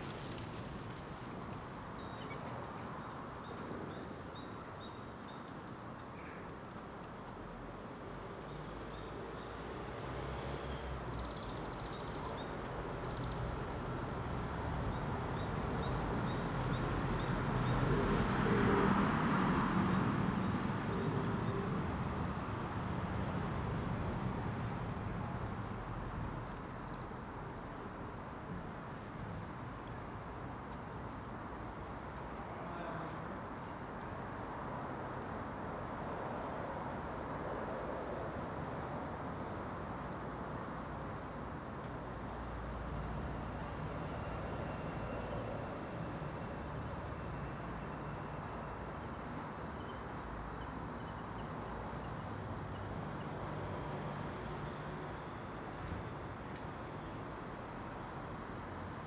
Contención Island Day 71 inner west - Walking to the sounds of Contención Island Day 71 Tuesday March 16th
The Drive
Bright sunshine dazzles and
out of the wind
warms
Blue tits explore the nest box
that hangs in the elder
Bang thud tinkle
builders come and go
and windchimes
England, United Kingdom, 16 March 2021, 11:09